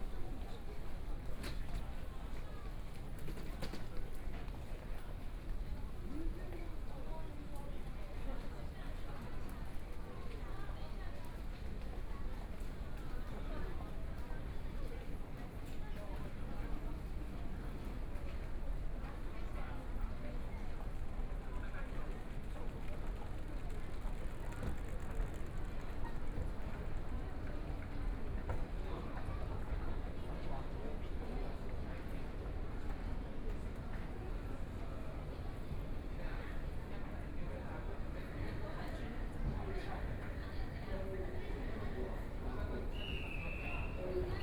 Taipei, Taiwan - Walking in the underground mall
Walking in the underground mall, Direction to MRT station, Clammy cloudy, Binaural recordings, Zoom H4n+ Soundman OKM II
Taipei City, Taiwan, 10 February